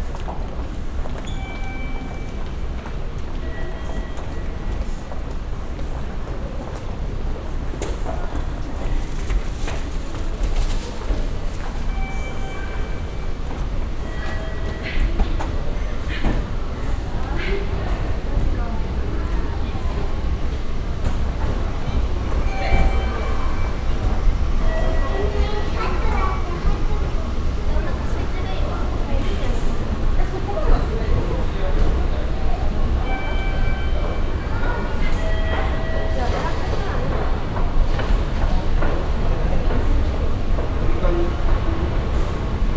yokohama, walk way to sakuragi subway station
On the walk way to the sakuragi subway station. An automatic japanese voice, the sound of the moving staircase a regular beeping warning sign and passengers
international city scapes - topographic field recordings and social ambiences